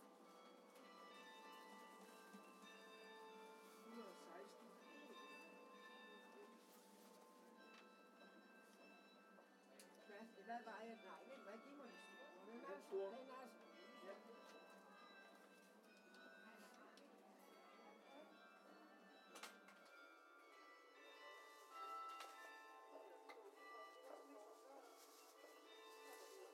Randers C, Randers, Danmark - Churchbell on marketday
Our citychurchbell is wonderfull, and at saturday noon there is also a market, so thats when i decided to record this piece